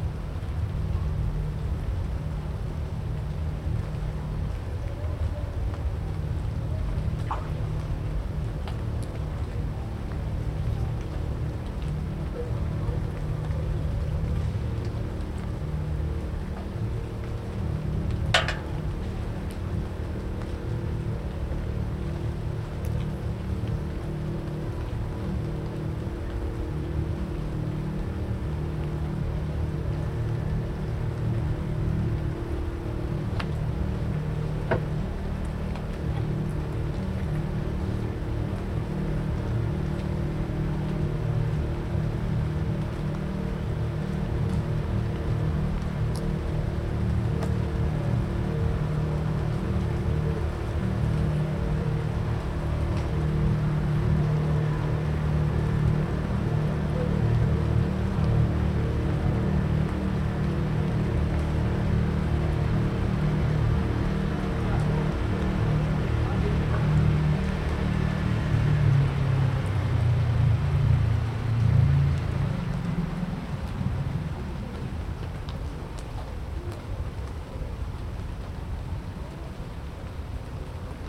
motorboat, airplane, airgun, people, birds, dog, rain